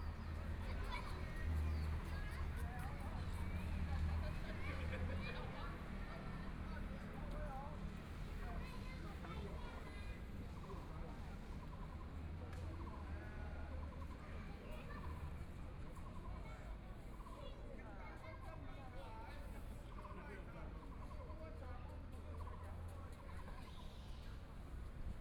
ZhuChang Park, Taipei City - in the Park
Chat between elderly, Traffic Sound, Kids game noise, Birds sound
Please turn up the volume
Binaural recordings, Zoom H4n+ Soundman OKM II